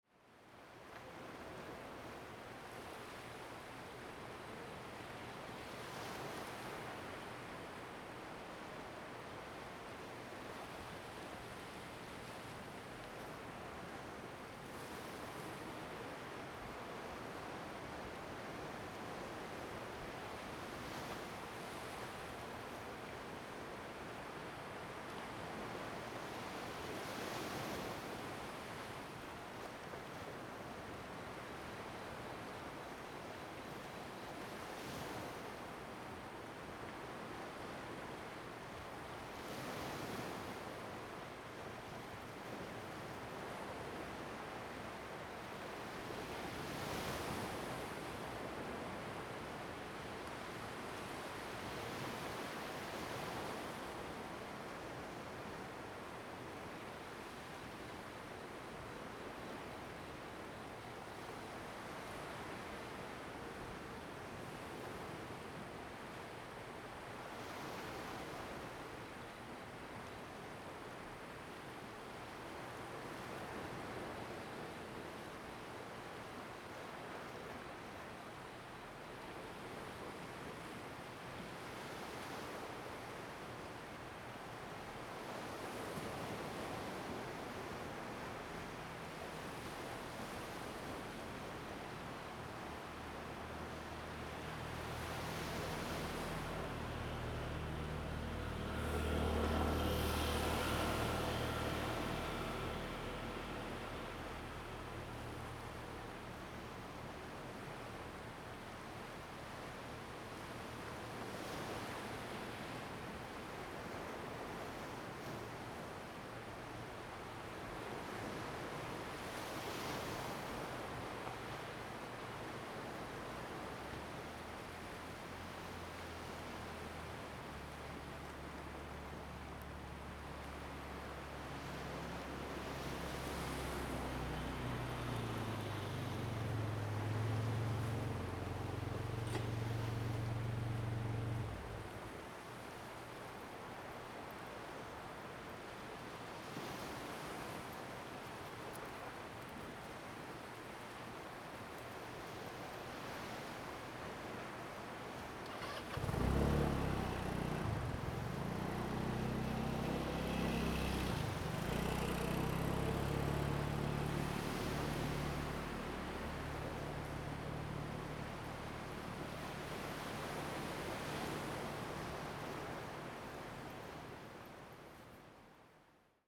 {
  "title": "椰油村, Koto island - On the coast",
  "date": "2014-10-29 21:27:00",
  "description": "On the coast, sound of the waves\nZoom H2n MS +XY",
  "latitude": "22.06",
  "longitude": "121.51",
  "altitude": "6",
  "timezone": "Asia/Taipei"
}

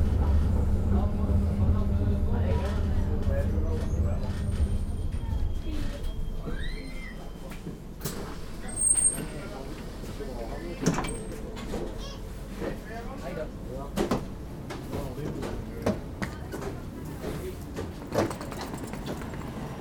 {
  "title": "Nieuwpoort, Belgique - Kusttram",
  "date": "2018-11-16 18:00:00",
  "description": "The tramway of the Belgian coast, between Lombardsijde and Nieuwpoort-Bad.",
  "latitude": "51.13",
  "longitude": "2.75",
  "altitude": "3",
  "timezone": "Europe/Brussels"
}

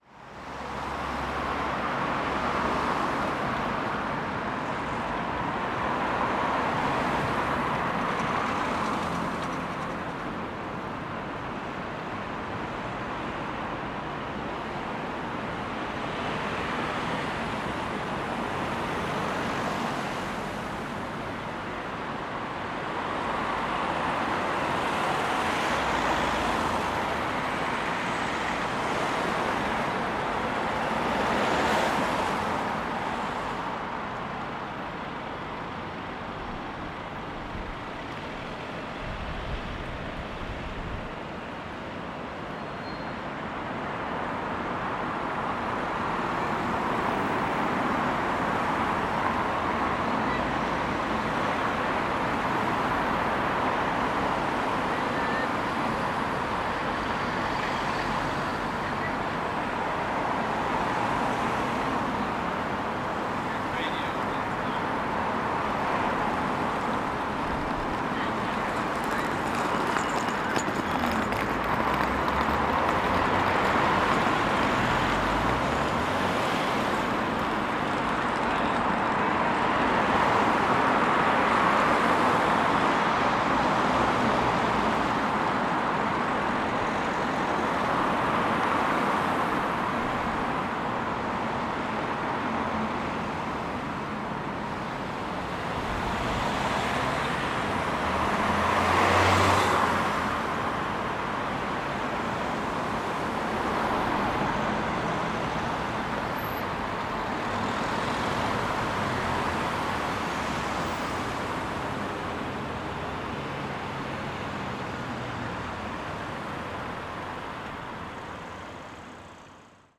{
  "title": "Shaftesbury Square, Belfast, UK - Shaftesbury Square",
  "date": "2022-03-27 16:38:00",
  "description": "Recording of vehicles passing, suitcase traveller, cars stopping, engines turning on/off, distant pedestrian chatter.",
  "latitude": "54.59",
  "longitude": "-5.93",
  "altitude": "7",
  "timezone": "Europe/London"
}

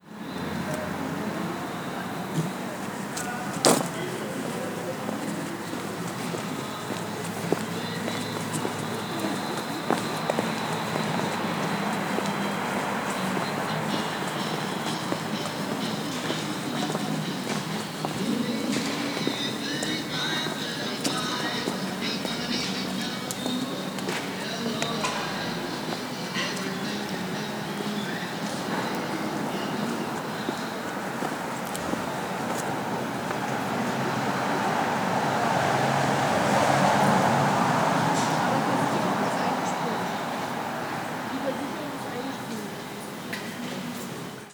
{
  "title": "berlin - queso y jamon outside",
  "date": "2010-10-26 22:20:00",
  "description": "queso y jamon, kulturbrauerei, cars, music, walking",
  "latitude": "52.54",
  "longitude": "13.41",
  "altitude": "59",
  "timezone": "Europe/Berlin"
}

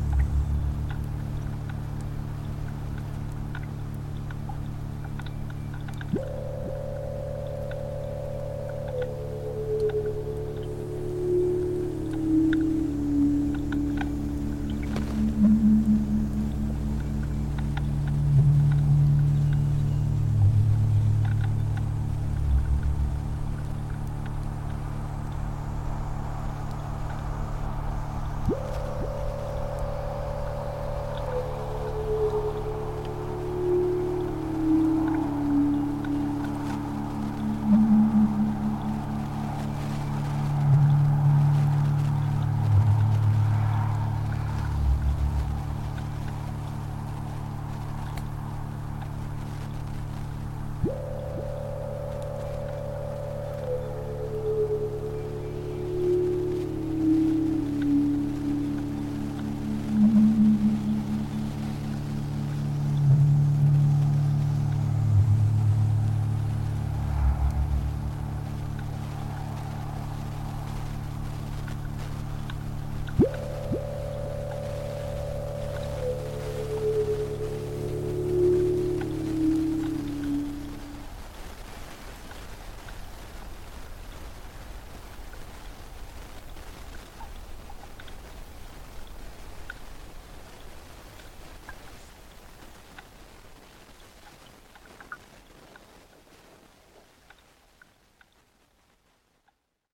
{
  "title": "Wave Farm, Acra, NY, USA - Wave Farm streams",
  "date": "2020-03-24 09:30:00",
  "description": "Mix of live streams and open mics at Wave Farm in New York's Upper Hudson Valley made on the morning of March 24 including Soundcamp's Test Site of the Acoustic Commons 1, Zach Poff's Pond Station, and Quintron's Weather Warlock.",
  "latitude": "42.32",
  "longitude": "-74.08",
  "altitude": "228",
  "timezone": "America/New_York"
}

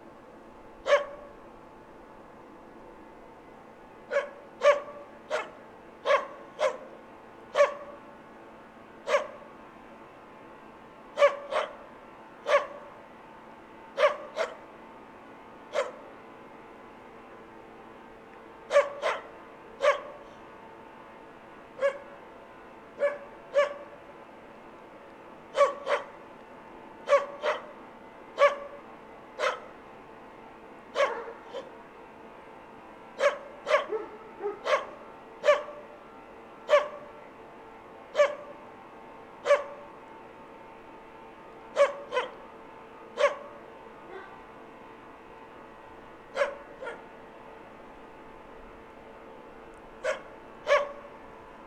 {
  "title": "Lithuania, Utena, dogs barking at fellow recordist",
  "date": "2011-01-19 16:13:00",
  "latitude": "55.52",
  "longitude": "25.59",
  "timezone": "Europe/Vilnius"
}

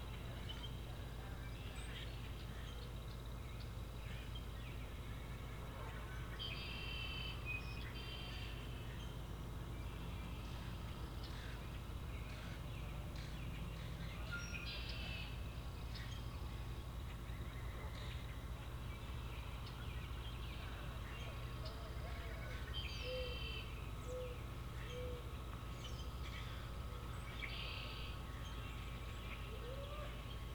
{
  "title": "Waters Edge - Spring in the Backyard",
  "date": "2022-04-21 18:10:00",
  "description": "After days of cool rainy weather we finally had a sunny and relatively warm day which brought out a lot of the wildlife sounds.",
  "latitude": "45.18",
  "longitude": "-93.00",
  "altitude": "278",
  "timezone": "America/Chicago"
}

